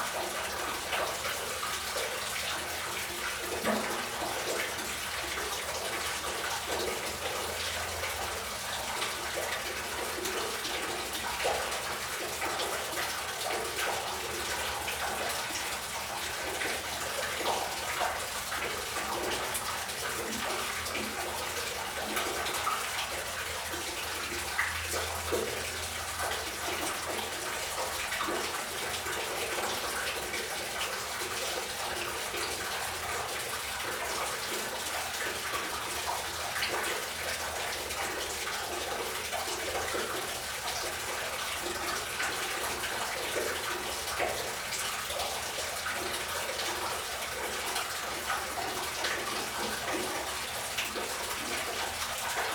Hutnicza, Siemianowice Śląskie, Polen - sewer, water flow
former area of Huta Laura (Laurahütte), a huge steel and mining industry complex, which existed here for over 150y. Water flowing in sewer, in front of one of the remaining abandoned buildings.
(Sony PCM D50, DPA4060)